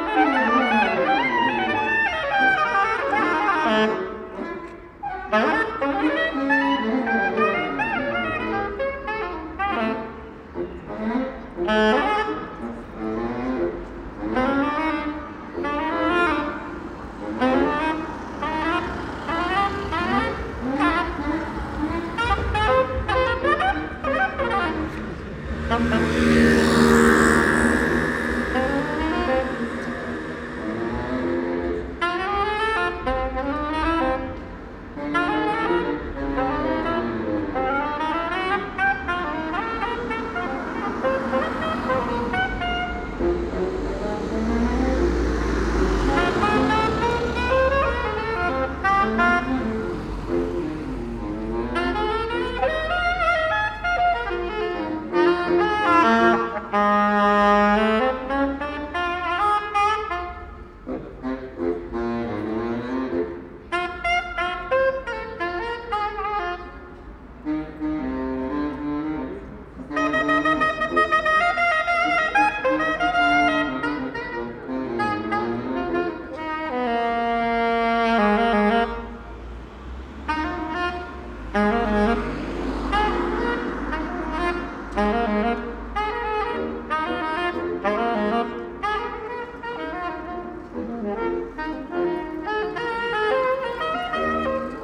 Stargarder Str., Berlin, Germany - 2 Saxophonists entertain the street from above
I just happened to be cycling past when 2 saxophonists started playing from high windows on opposite sides of the street and people stop to listen. So a hurried recording to capture the moment. Traffic still passes and there's a rare plane. My area has had none of the mass applause for health workers or coordinated bell ringing describe from elsewhere. But spontaneous individual sonic acts definitely fit with the Berlin character and this is one of them. I really like that until the applause happens in the recording you have no idea other people are there. The clapping reverberating from the walls reveals not only them but the size and dimensions of the street.
Deutschland, 28 March